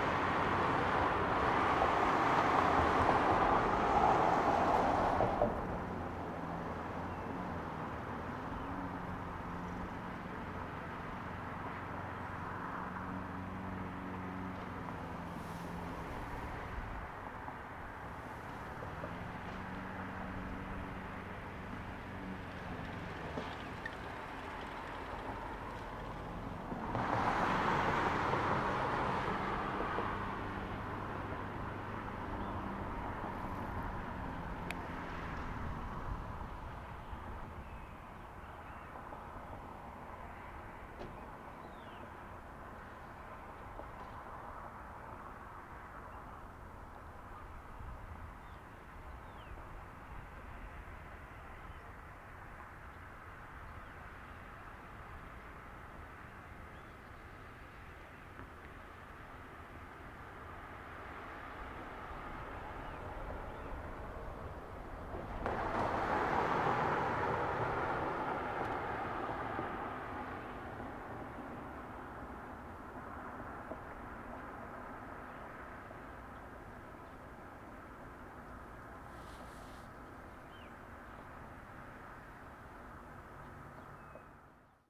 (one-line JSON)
{"title": "Levee Floodgate, Valley Park, Missouri, USA - Floodgate", "date": "2020-12-06 15:40:00", "description": "Recording from bridge of cars passing through floodgate of Valley Park Meramec Levee. Someone is mowing their lawn.", "latitude": "38.55", "longitude": "-90.47", "altitude": "129", "timezone": "America/Chicago"}